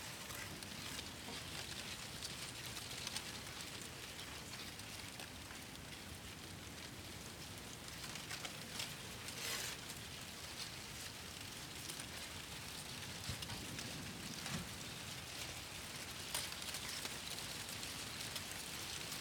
Castiglione del Lago, Perugia, Italien - Via Belveduto, Castiglione del Lago, Perugia - Wind in the cornfield, some sounds from a building lot
Via Belveduto, Castiglione del Lago, Perugia - Wind in the cornfield, some sounds from a building lot.
[Hi-MD-recorder Sony MZ-NH900 with external microphone Beyerdynamic MCE 82]
Perugia, Italy, 23 September 2013